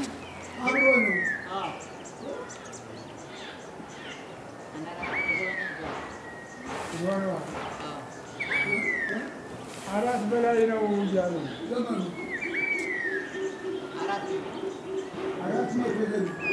Fortress of Kusquam/Qusquam, Gonder, Ethiopia - Lone man singing at Qusquam in Gondar, Ethiopia
In this noisy recording, we find a lone man singing to himself outside the Church of St. Mary, which lies within the Qusquam fortress complex in Gondar, Ethiopia.
አማራ ክልል, ኢ.ፌ.ዲ.ሪ., 8 February 2019